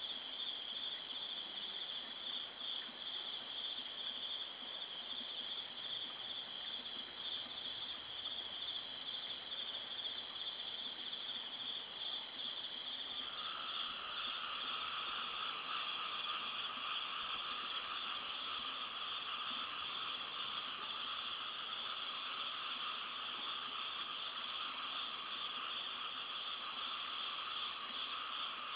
Outside the entrance of Wan Tsai South Campsite at midnight, Wan Tsai Nature Trail, Sai Kung, Hong Kong - Outside the entrance of Wan Tsai South Campsite at midnight
Located outside the entrance of the campsite on Wan Tsai Peninsula in the Sai Kung West Country Park (Wan Tsai Extension), next to the Long Harbour (Tai Tan Hoi). You can hear the birds and bugs at 04:30 a.m. and a plane flying above.
位於西頁西郊野公園灣仔擴建部分內的灣仔半島的灣仔南營地正門外，鄰近大灘海。你可以聽到深夜四時半的蟲嗚鳥響，和夜行的飛機越過的聲音。
#Night, #Cricket, #Bird, #Plane
香港 Hong Kong, China 中国